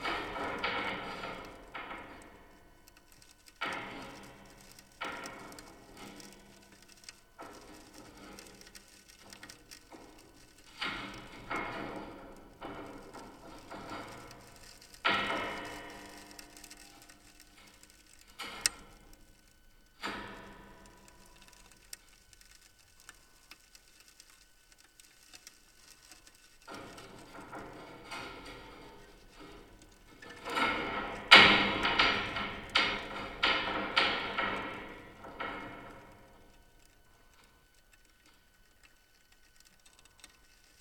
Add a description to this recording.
zoom h4n, contact microphone, Field recording of the urban ecology collaborative project with John Grzinich organized by the Museum of Art in Lodz